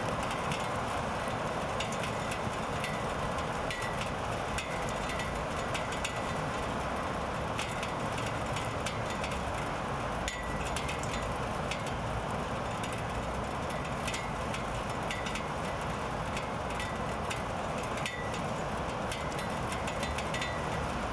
대한민국 서울특별시 서초구 서초4동 서초중앙로24길 27 - Rooftop, Raindrops ping
Apartment Rooftop, Raindrops making ping sound
저녁 아파트 옥상, 빗방울이 난간에 떨어지는 소리